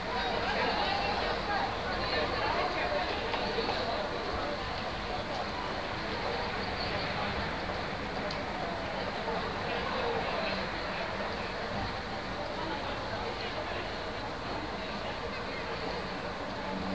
Zolnierska, Szczecin, Poland
Students at entrance to school.